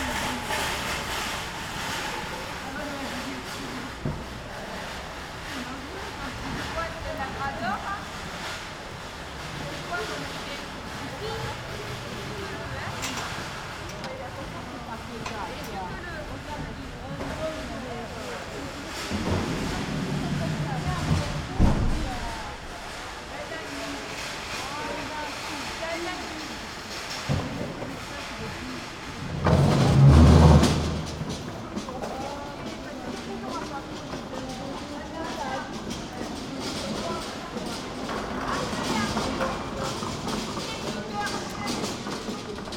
Place de l'Hôtel de ville, Aix-en-Provence - market setup
the flower market is beeing set up
(PCM D50)